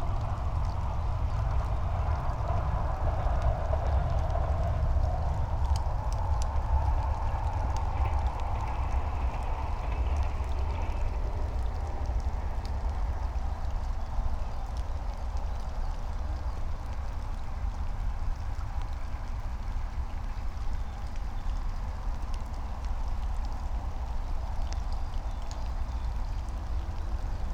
14:46 Berlin Buch, Lietzengraben - wetland ambience
Deutschland, 14 April 2022